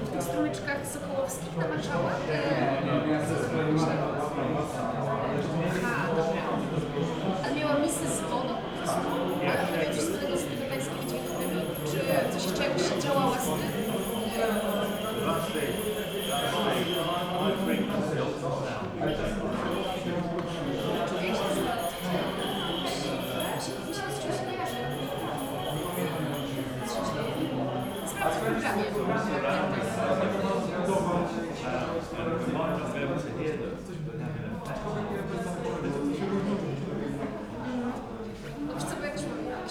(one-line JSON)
{"title": "Poznan, Wilda district, Spot galery - after meeting", "date": "2015-09-11 19:37:00", "description": "people talking after a meeting in a specious room in Spot. in one of the groups Chris Watson is explaining how a high frequency detector works. (sony d50)", "latitude": "52.39", "longitude": "16.92", "altitude": "60", "timezone": "Europe/Warsaw"}